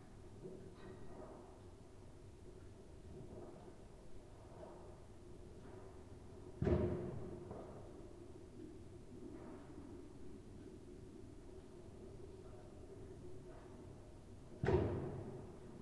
berlin, friedelstraße: backyard window - the city, the country & me: backyard window, bangers and other fireworks
new year's eve afternoon, noise of bangers and other fireworks, someone smashes bottles in the bottle bank, noise of steps in the snow, planes crossing the sky and the noise of the gas heating
the city, the country & me: december 31, 2009
31 December 2009, 17:25